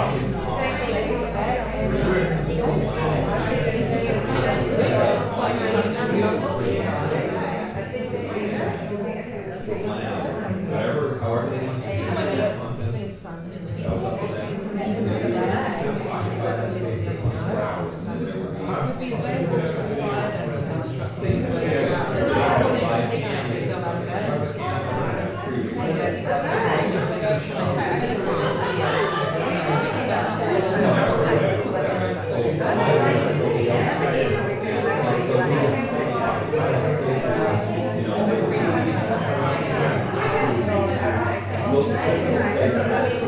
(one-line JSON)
{"title": "neoscenes: barbecue at Don and Anas place", "date": "2010-12-04 21:15:00", "latitude": "-39.92", "longitude": "175.06", "altitude": "41", "timezone": "Pacific/Auckland"}